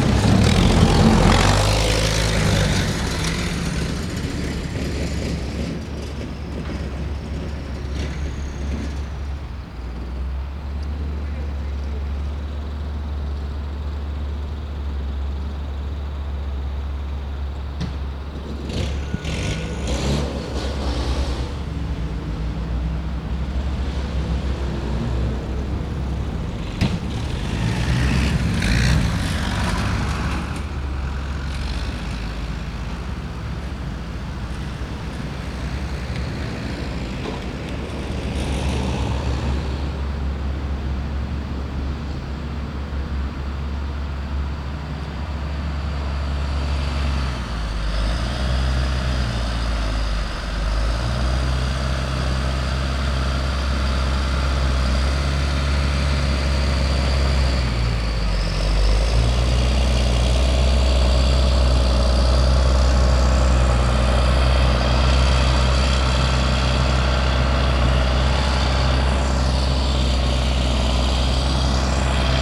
Montreal: St-Henri (snow removal) - St-Henri (snow removal)
equipment used: digital audio recorder PMD660, Shure SM58
Trucks removing snow
QC, Canada, 26 February, 13:00